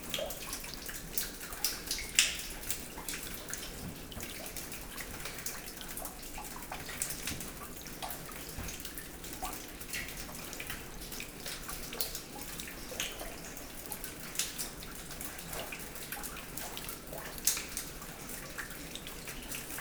Escherange, France - Molvange schaft
Below the Molvange schaft. It's raining hard inside, we are prepairing ourselves to climb it without clothes, as it's wet.